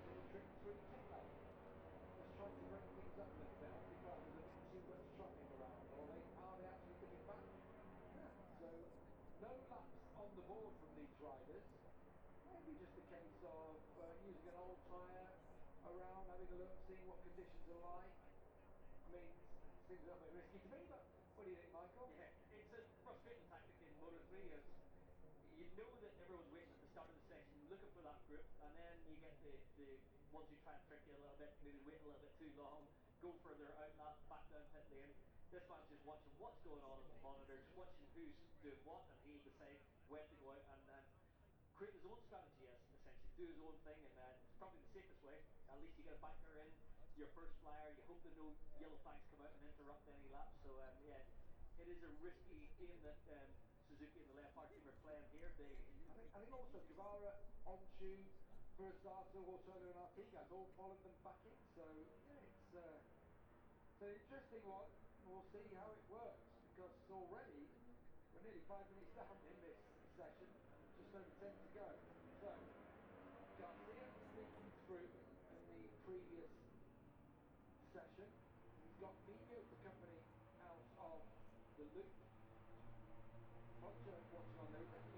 Silverstone Circuit, Towcester, UK - british motorcycle grand prix 2022 ... moto three ...
british motorccyle grand prix 2022 ... moto three qualifying two ... zoom h4n pro integral mics ... on mini tripod ...